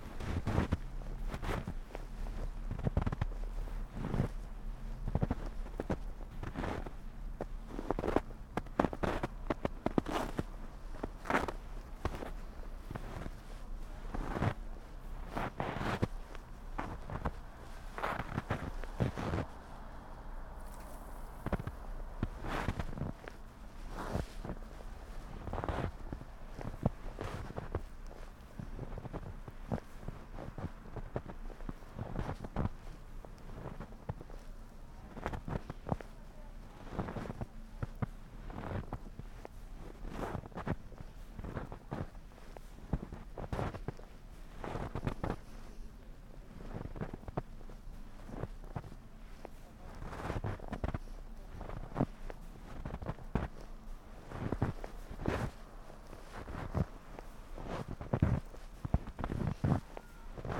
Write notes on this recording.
snow, steps, stream, spoken words